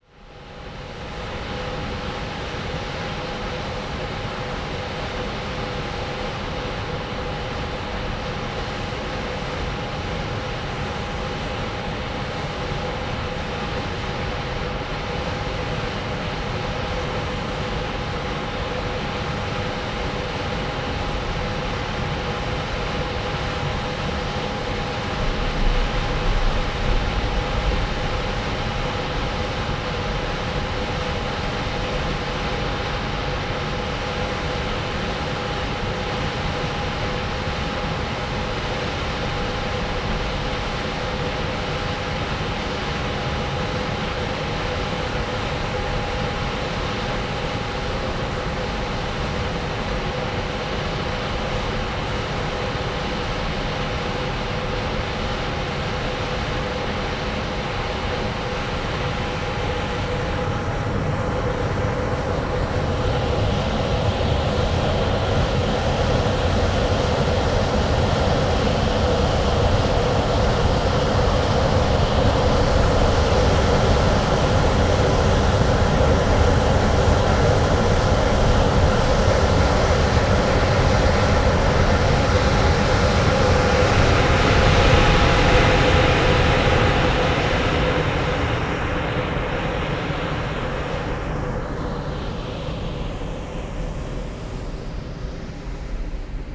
Binckhorst Haven, Den Haag - Vent by bridge
Loud airco vent, moving mic. traffic on bridge, quiet harbour ambience. Soundfield Mic (ORTF decode from Bformat) Binckhorst Mapping Project